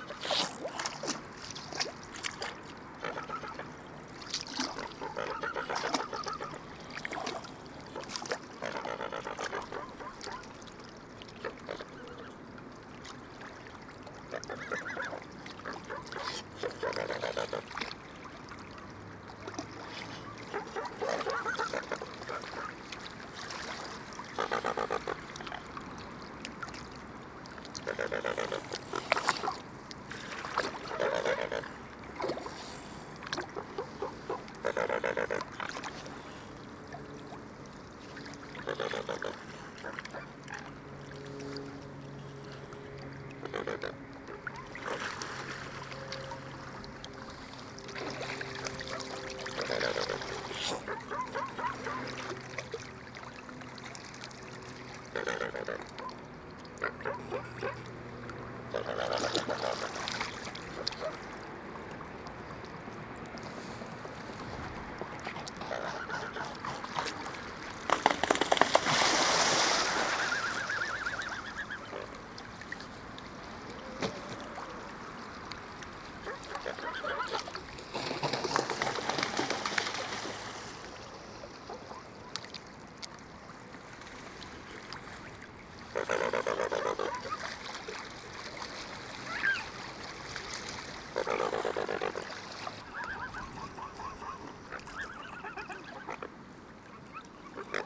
Montereau-Fault-Yonne, France - Swans
On the river Seine, swans come and want to eat me.